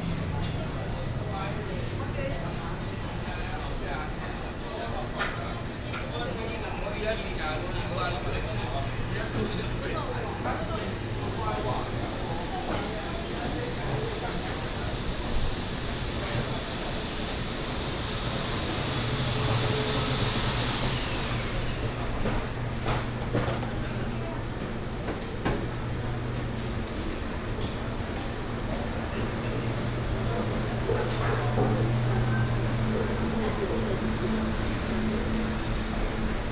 Traffic Sound, Walking through the market